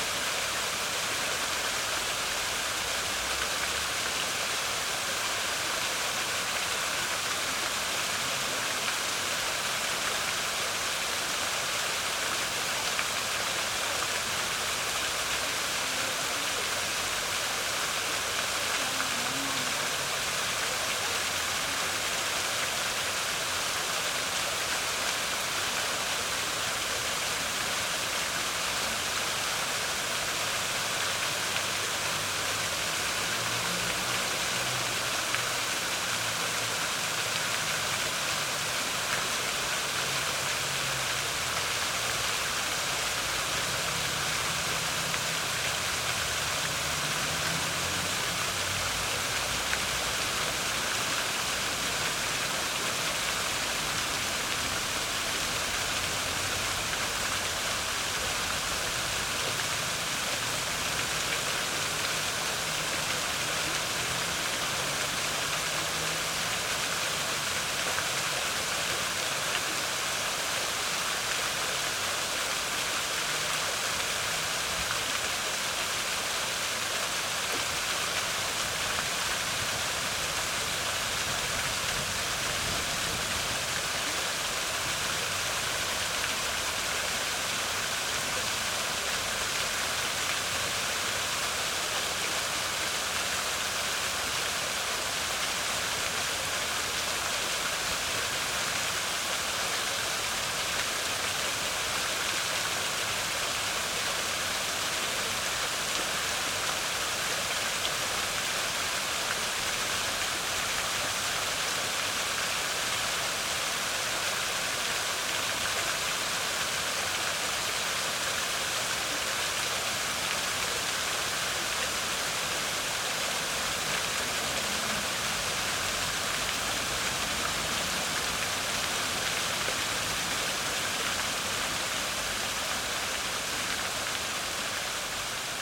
Auditorium, Lyon, France - Fountain - Fontaine
Tech Note : Sony PCM-M10 internal microphones.